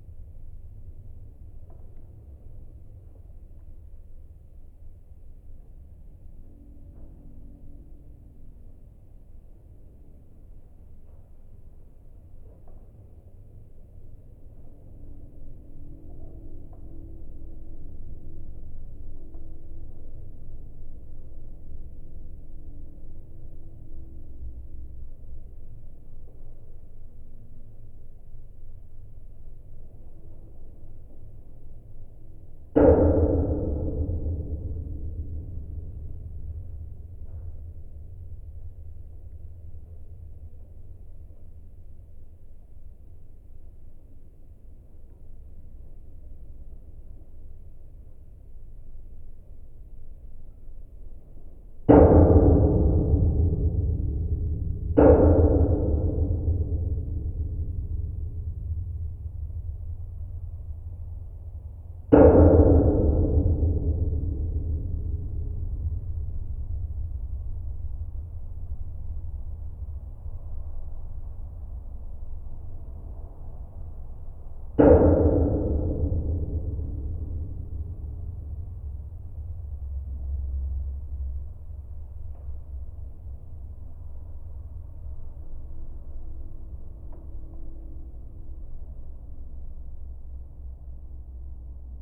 Just another abandoned metallic watertower in my sound collection. Wind moves some element of stairs...